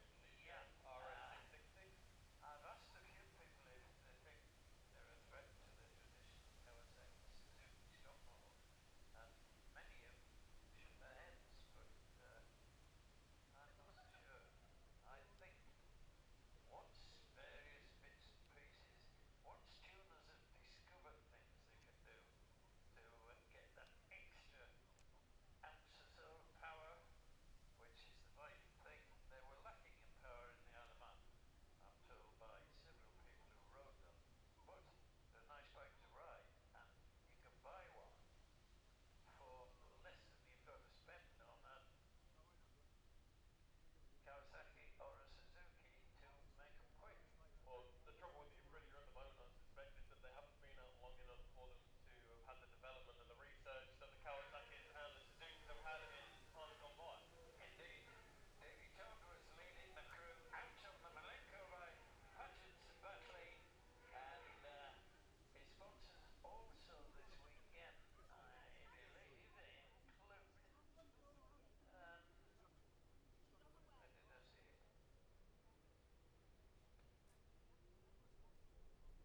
Jacksons Ln, Scarborough, UK - gold cup 2022 ... 600 practice ...
the steve henshaw gold cup ... 600 group one and group two practice ... dpa 4060s on t-bar on tripod to zoom f6 ...